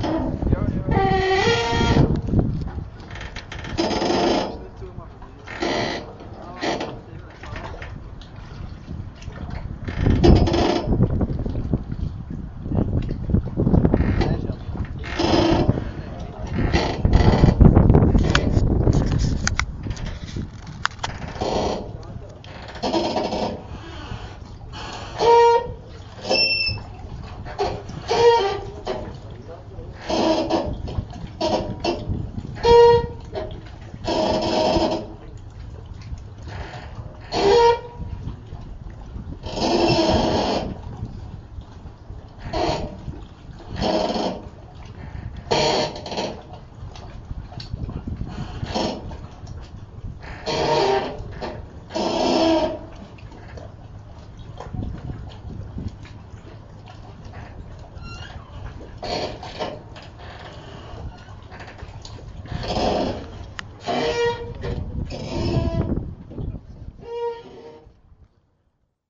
the ferry terminal ponton is waiting for the ship to come. As time in water is waves, and waves on matter are sound, this is the time to wait till the ferry arrives.